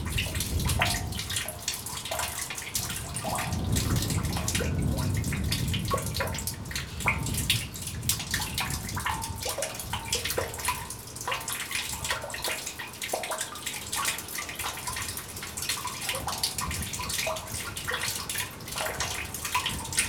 Poznan, Campus UAM Morasko - ringing drain
a lazy trickle dribbling from a water hose into a drain, making a nice metallic sounds in the reservoir.